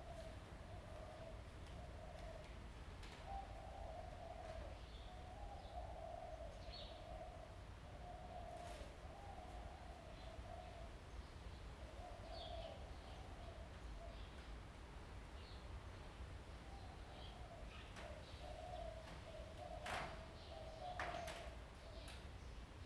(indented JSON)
{
  "title": "劉澳鶯山宮, Jinsha Township - Birds singing",
  "date": "2014-11-03 11:18:00",
  "description": "Birds singing, next to the temple\nZoom H2n MS+XY",
  "latitude": "24.49",
  "longitude": "118.39",
  "altitude": "6",
  "timezone": "Asia/Taipei"
}